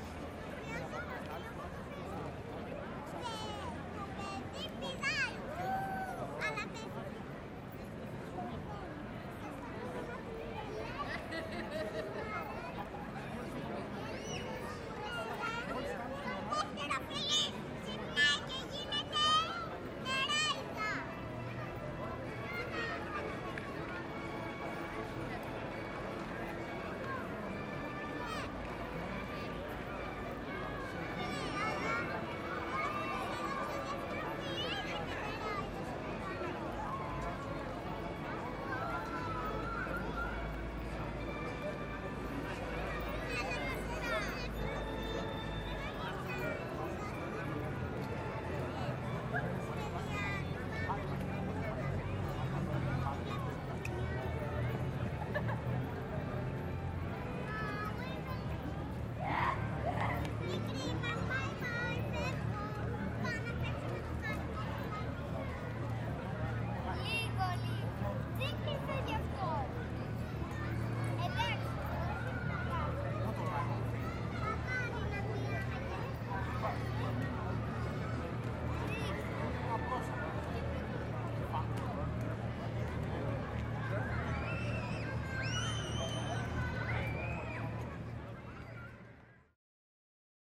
Ανθυπασπιστού Μιλτιάδη Γεωργίου, Ξάνθη, Ελλάδα - Central Square/ Κεντρική Πλατεία- 20:15
Kids playing, bike bell rings, people talking, music on speakers.
Περιφέρεια Ανατολικής Μακεδονίας και Θράκης, Αποκεντρωμένη Διοίκηση Μακεδονίας - Θράκης, 12 May 2020, ~20:00